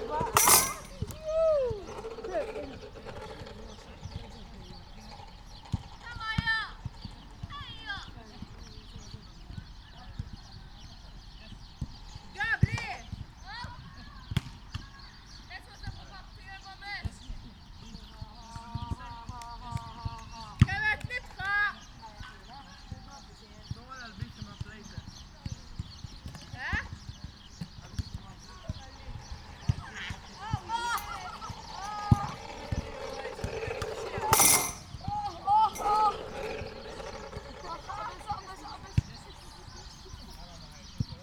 Family Park, Marsaskala, Malta - playground ambience
The rehabilitation of the closed landfill in Marsascala, used as a dumpsite in the 1970s, is one of the projects part financed by EU Funding for the rehabilitation of closed landfills in Malta & Gozo.
The Sant'Antnin Family Park is intended as a leisure area for both local and foreign visitors. The project is spread over 80 tumoli of land. In addition to the recreational park, works have also included the restoration of St. Anthony’s Chapel and the construction of a visitor centre, which serves as an education centre on waste management.
(SD702, DPA4060)
7 April 2017, 17:10